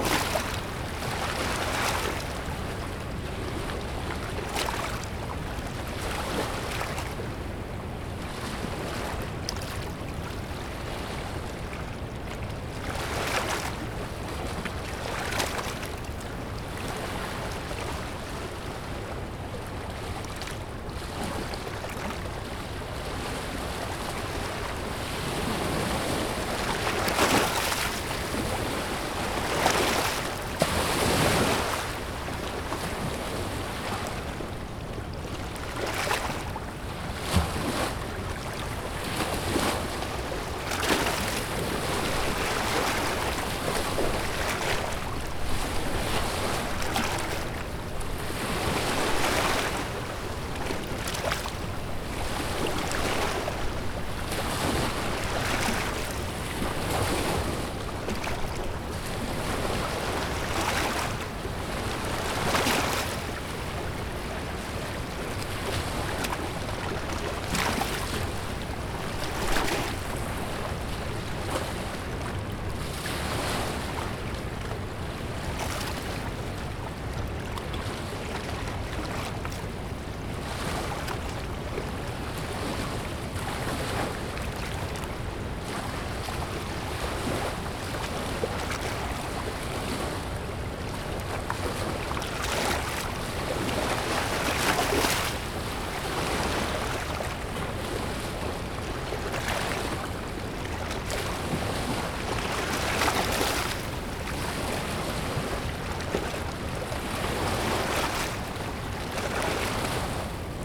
Recorded at the public boat launch near the Prairie du Sac Dam. Sunny day, lots of boats fishing. Handheld recording with a Tascam DR-40 Linear PCM Recorder.